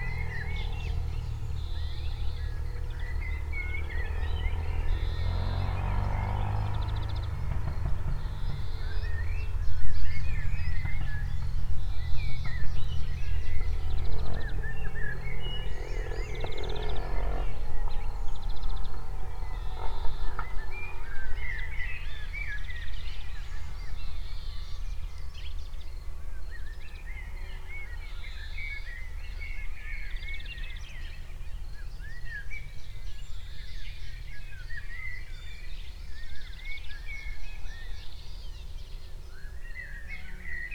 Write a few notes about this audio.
Birds, Insects and a Quad, A vibrant nature environment with lots of birds and insects is used as a playground for some individual fun.